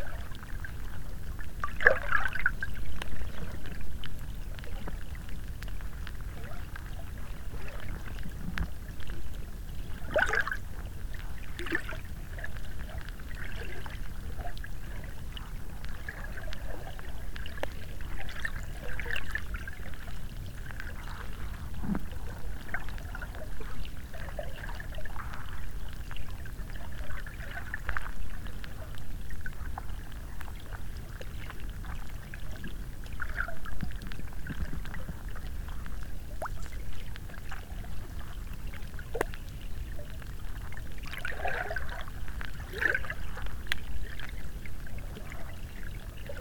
practically no living creatures...some drone-buzz from the city